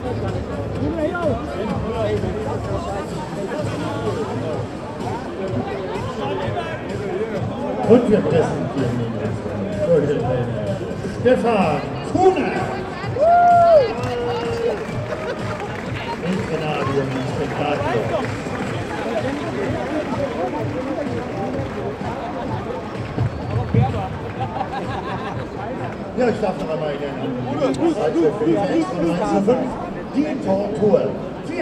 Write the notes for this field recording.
before the football match mainz 05 - hamburger sv, footbal fans of mainz 05, stadium commentator, the city, the country & me: october 16, 2010